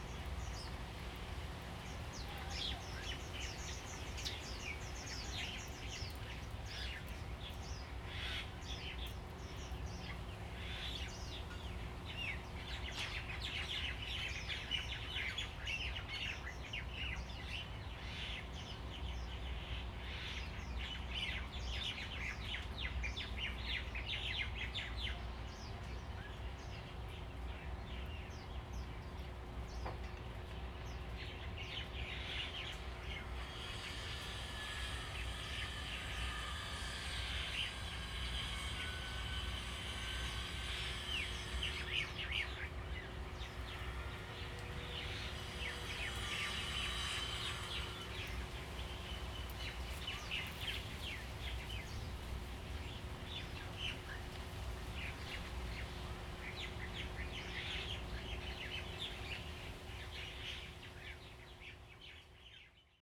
湖埔路, Lieyu Township - Birds singing
Birds singing, Traffic Sound, Dogs barking
Zoom H2n MS+XY
金門縣 (Kinmen), 福建省, Mainland - Taiwan Border, 4 November 2014, 08:18